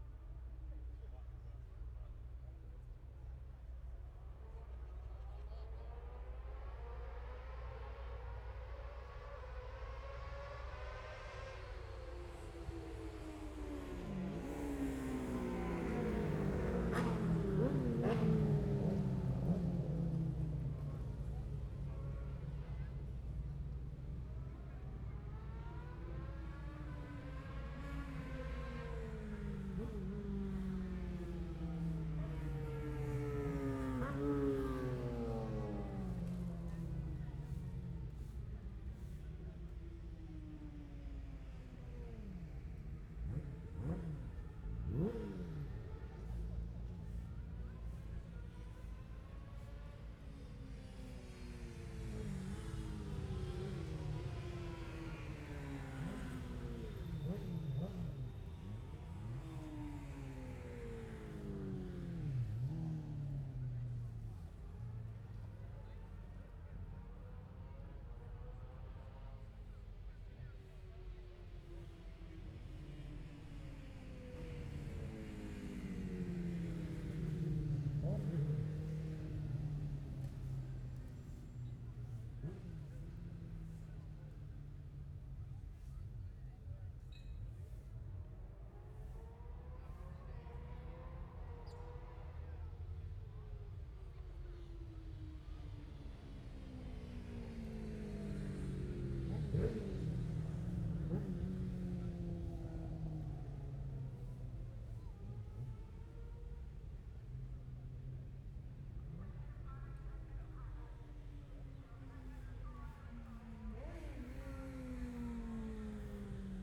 Scarborough District, UK - Motorcycle Road Racing 2016 ... Gold Cup ...
Sidecar practice ... Mere Hairpin ... Oliver's Mount ... Scarborough ... open lavalier mics clipped to baseball cap ...